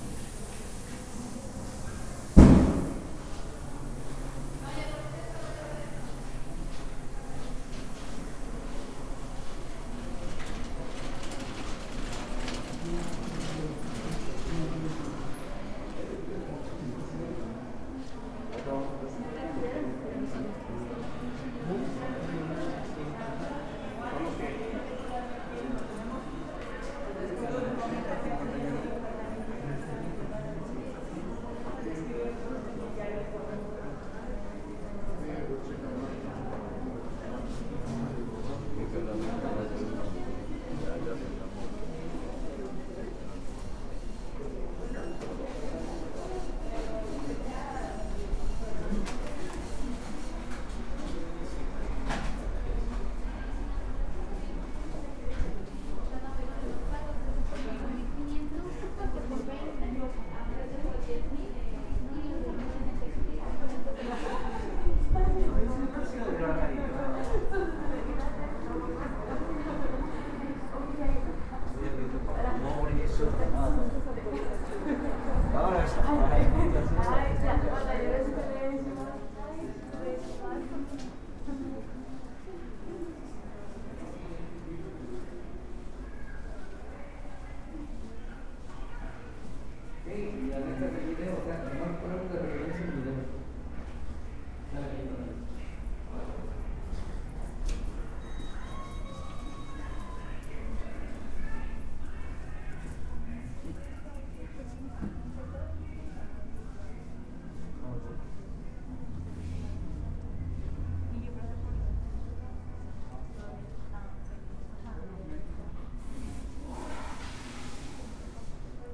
Calle Hidalgo, Obregon, León, Gto., Mexico - Sentado en una banca del hospital Aranda De La Parra y luego caminando por varias áreas de la planta baja.
Sitting on a bench at the Aranda De La Parra hospital and then walking through various areas of the ground floor / first floor.
I made this recording on march 19th, 2022, at 1:04 p.m.
I used a Tascam DR-05X with its built-in microphones.
Original Recording:
Type: Stereo
Esta grabación la hice el 19 de marzo de 2022 a las 13:04 horas.
Usé un Tascam DR-05X con sus micrófonos incorporados.
Guanajuato, México, 19 March 2022